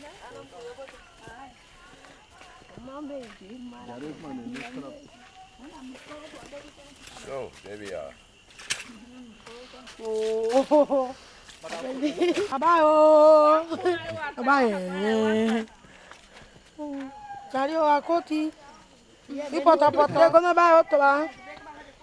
Malobbi, Suriname - women from Mailobbi walking to their fields
women from Mailobbi walking to their fields
Sipaliwini, Suriname, 8 May 2000, ~21:00